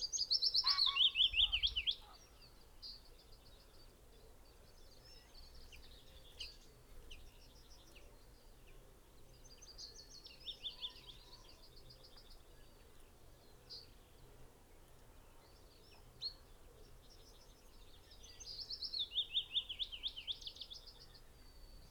Green Ln, Malton, UK - willow warbler song soundscape ...
willow warbler song soundscape ... dpa 4060s clipped to bag in crook of tree to Zoom H5 ... bird song ... calls ... wren ... pheasant ... blackcap ... chaffinch ... wood pigeon ... blackbird ... yellowhammer ... crow ... greylag goose ... herring gull ... lapwing ...